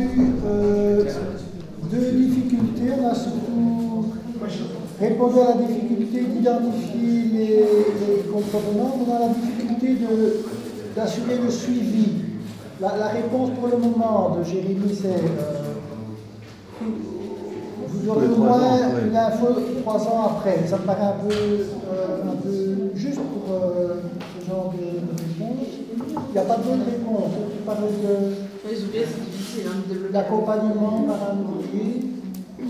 Chaumont-Gistoux, Belgique - Colloquium
A conference is made about the enormous garbage deposits on the river banks in Brabant-Wallon district.